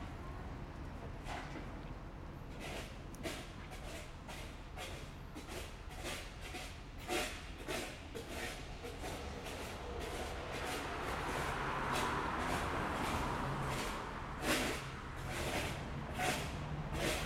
leipzig lindenau, bahnhof lindenau, baustelle
baustelle am bahnhof lindenau, baugeräusche, straszenbahn, keine züge.